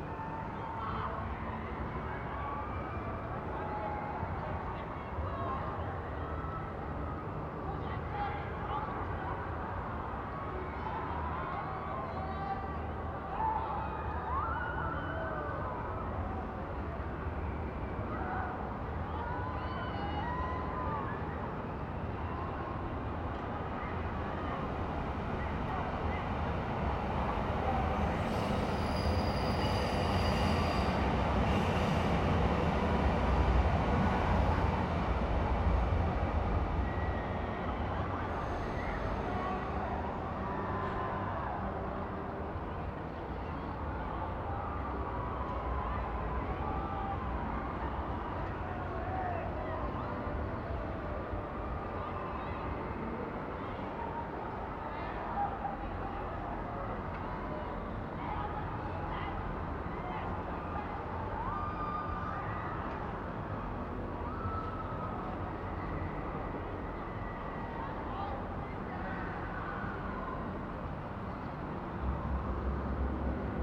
berlin, voltairestr. - distant christmas market
sunday evening, sound of the nearby christmas market in an inner courtyard